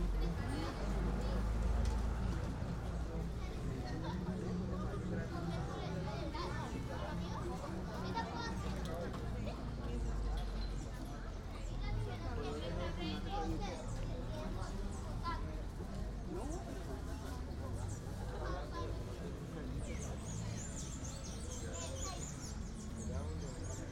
Apulo, Cundinamarca, Colombia - Apulo central park
Sound walk around the central park. Recorded the morning after the local feasts. Tense calm, asleep town. Recorded in motion with two mic capsules placed in a headphones set
4 January, 12:24